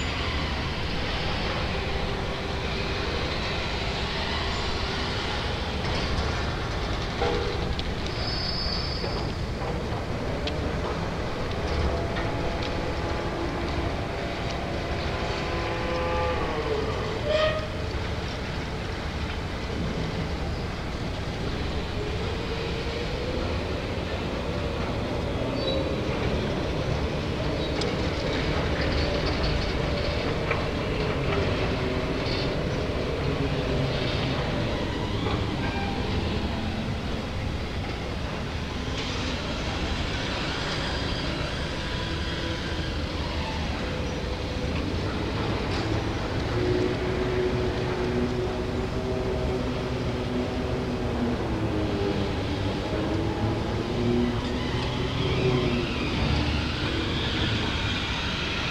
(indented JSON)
{
  "title": "Cranes, Antwerpen harbour - Antwerpen harbour",
  "date": "2010-08-02 16:17:00",
  "description": "Two large cranes transferring cargo (sand?) from a sea-going vessel onto a river barge. Zoom H2.",
  "latitude": "51.26",
  "longitude": "4.40",
  "altitude": "5",
  "timezone": "Europe/Brussels"
}